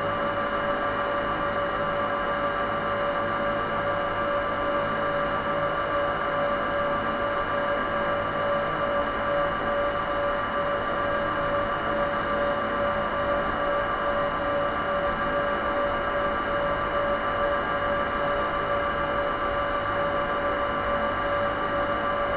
one of two tubes of unclear purpose, kalmistupark, tallinn